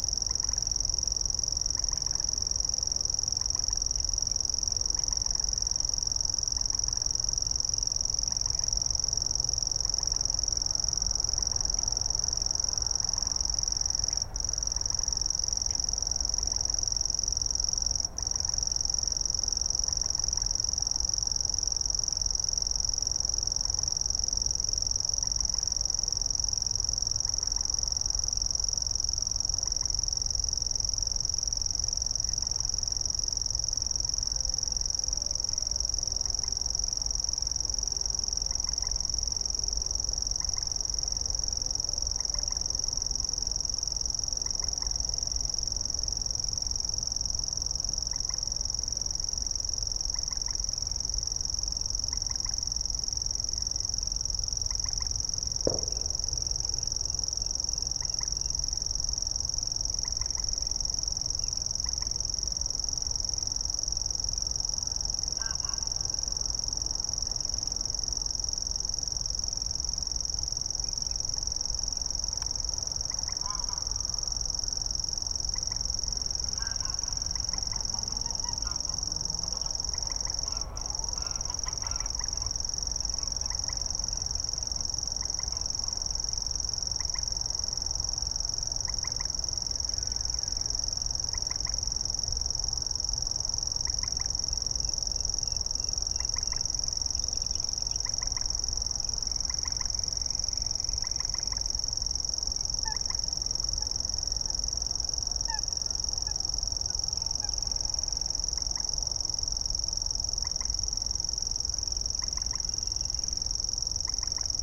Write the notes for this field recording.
Zwischen Kleiner und Großer Zingerteich